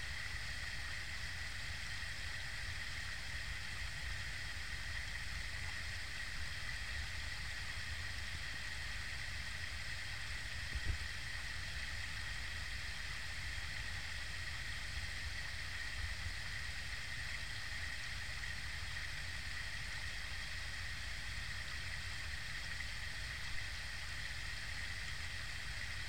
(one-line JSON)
{"title": "Kavarskas, Lithuania, underwater near dam", "date": "2017-08-22 15:50:00", "description": "hydrophones near dam", "latitude": "55.44", "longitude": "24.94", "altitude": "59", "timezone": "Europe/Vilnius"}